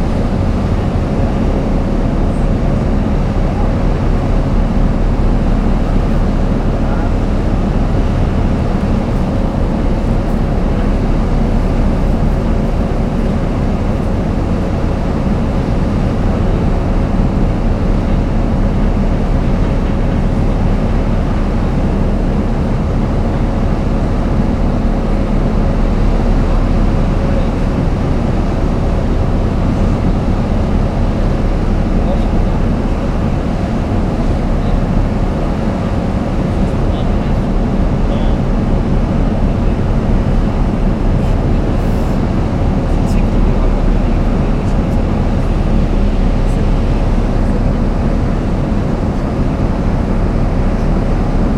Sound on the ferry deck
6 March 2009